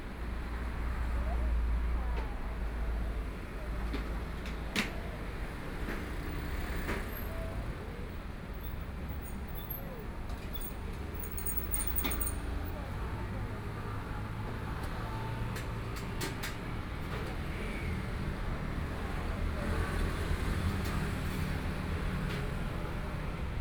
in the niu-rou-mian shop, Next to the park, Traffic Sound, Binaural recordings, Zoom H4n + Soundman OKM II
Taipei City, Taiwan, 22 January 2014, 18:33